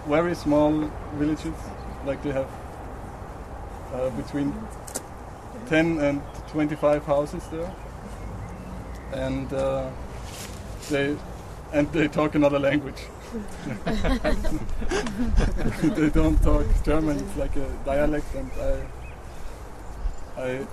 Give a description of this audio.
landscape architect david fadovic talking about his memories of growing up in-between bratislava and vienna